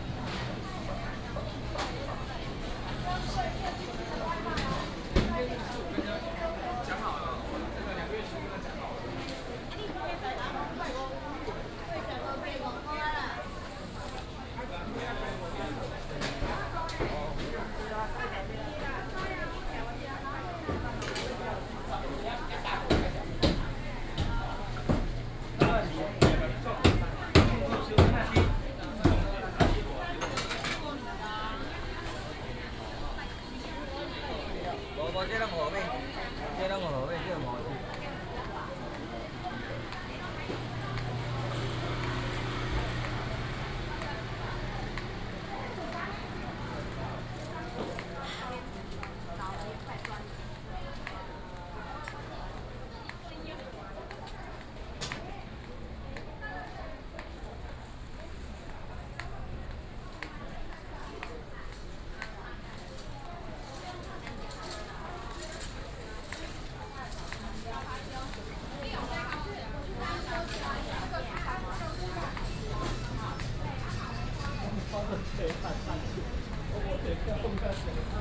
新埔市場, 板橋區, New Taipei City - Walking through the traditional market
Walking through the traditional market
Please turn up the volume a little. Binaural recordings, Sony PCM D100+ Soundman OKM II
Banqiao District, New Taipei City, Taiwan